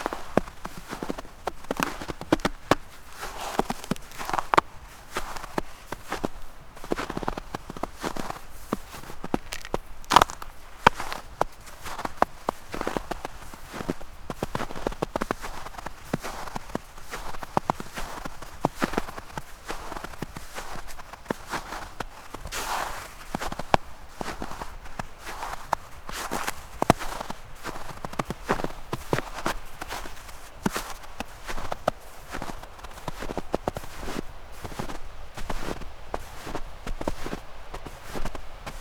river Drava, Loka - stones, snow
Starše, Slovenia